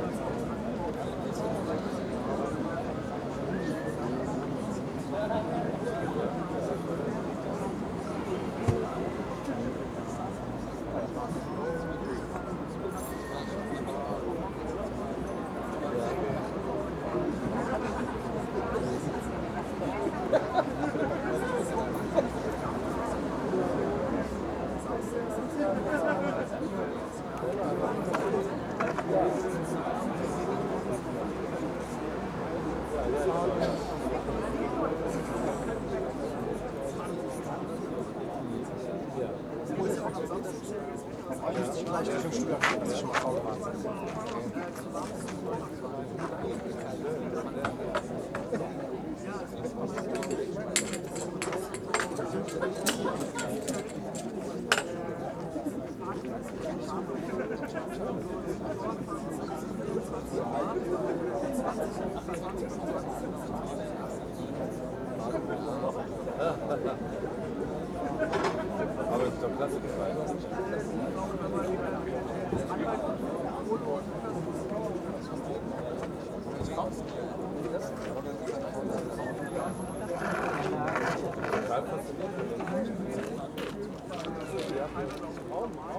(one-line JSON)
{"title": "frankfurt/main: matthias-beltz-platz - the city, the country & me: in front of a kiosk", "date": "2015-06-04 22:04:00", "description": "people enjoying a beer at a small kiosk\nthe city, the country & me: june 4, 2015", "latitude": "50.13", "longitude": "8.69", "altitude": "128", "timezone": "Europe/Berlin"}